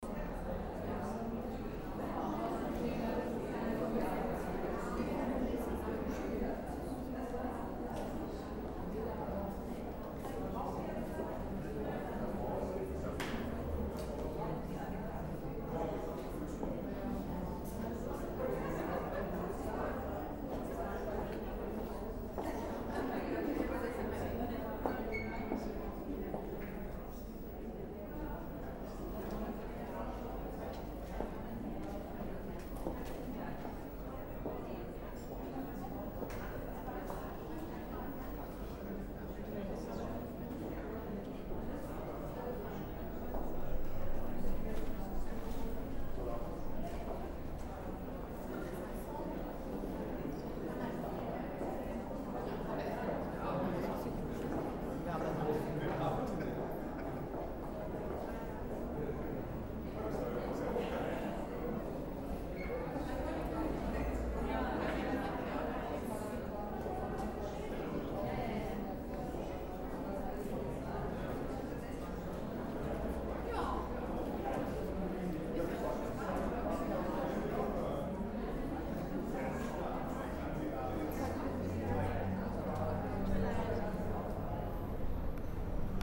bochum, schauspielhaus, kassenfoyer
first theatre foyer with the cashpoint.
recorded june 23rd, 2008 before the evening show.
project: "hasenbrot - a private sound diary"
Bochum, Germany